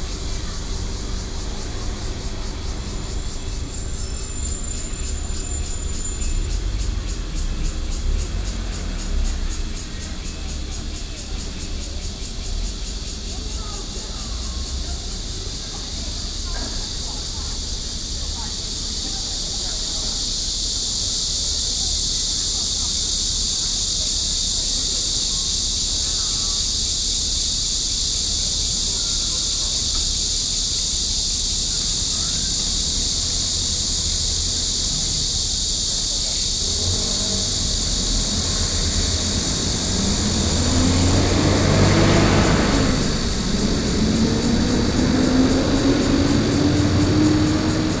Ln., Rixin St., Shulin Dist., New Taipei City - Cicada sounds
Cicada sounds, Traffic Sound, Hot weather
Sony PCM D50+ Soundman OKM II
Shulin District, New Taipei City, Taiwan, July 2012